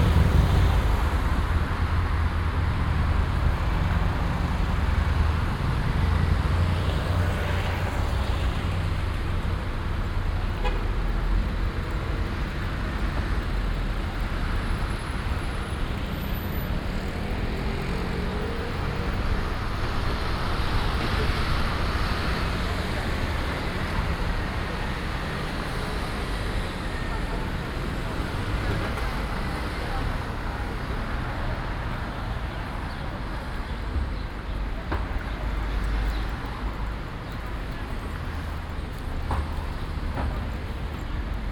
Av. General Marvá, Alicante, Spain - (19 BI) Walk through a busy promende
Binaural recording of a walk through General Marvá from Castel towards Marina.
Plenty of traffic, bikes engines, buses, some fountains on the way, etc.
Recorded with Soundman OKM + Zoom H2n
Comunitat Valenciana, España, 7 November, 17:30